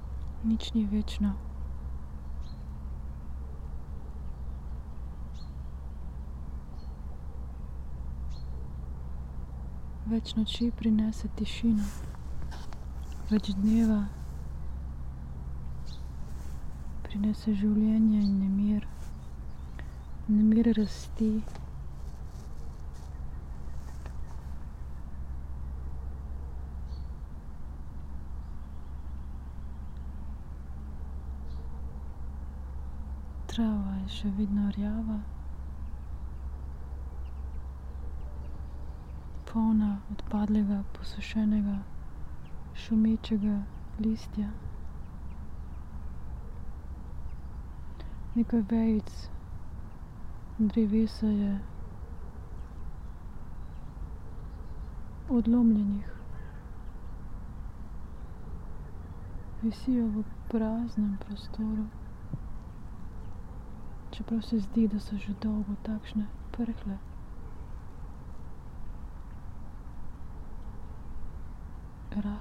tree crown poems, Piramida - opazovalka z drevesa
spoken words, coldness and grayness